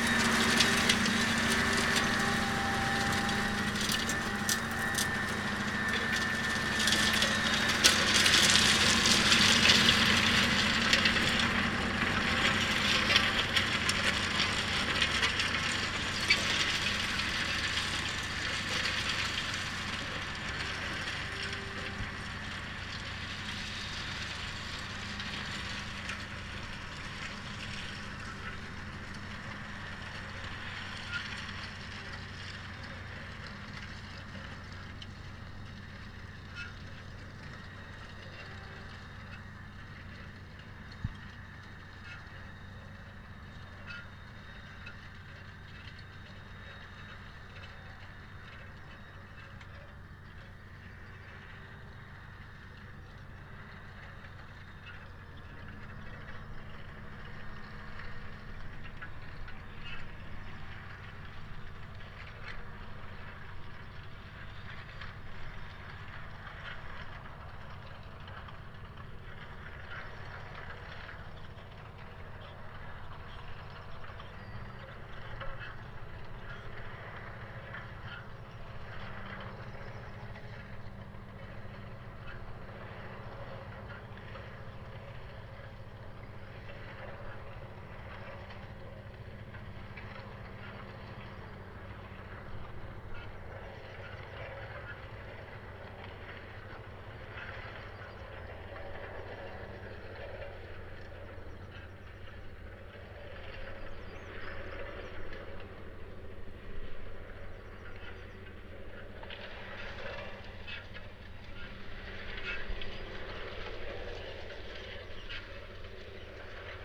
Green Ln, Malton, UK - dropping a plough ...
caterpillar tractor setting up a plough before moving off ... dpa 4060s in parabolic to mixpre3 ... bird song ... territorial call ... from ... red-legged partridge ... yellowhammer ... chaffinch ...
England, United Kingdom, 21 March 2020